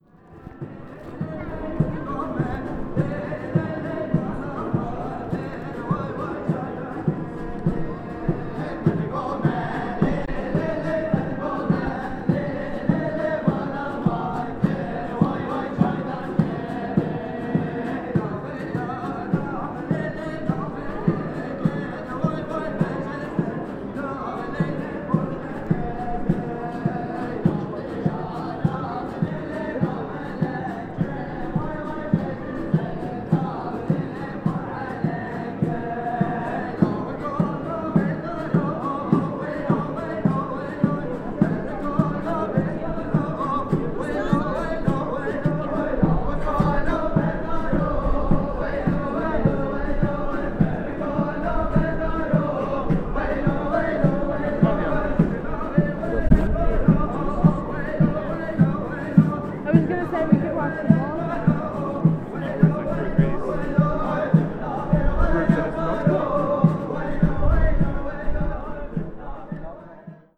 Şehit Muhtar, İstiklal Cd., Beyoğlu/İstanbul, Turchia - Street musicians
Street musicians: Kurdish group playing in Istiklal Caddesi
Marmara Bölgesi, Türkiye